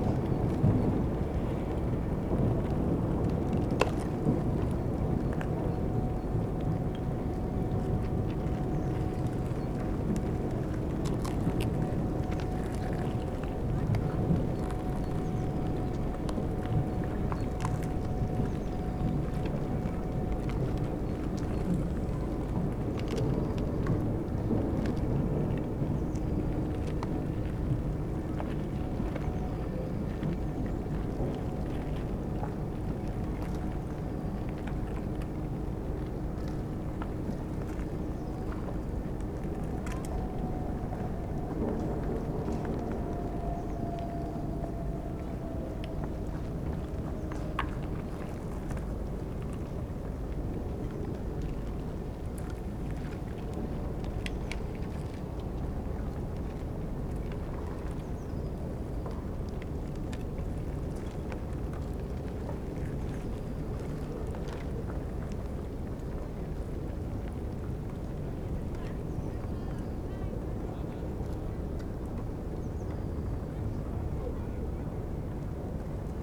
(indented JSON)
{
  "title": "berlin, plänterwald: spreeufer, steg - the city, the country & me: icebreaker, coal barges",
  "date": "2012-02-12 16:09:00",
  "description": "icebreaker opens a channel through the ice, coal barges on their way to the nearby power plant, cracking ice-sheets\nthe city, the country & me: february 12, 2012",
  "latitude": "52.47",
  "longitude": "13.49",
  "altitude": "31",
  "timezone": "Europe/Berlin"
}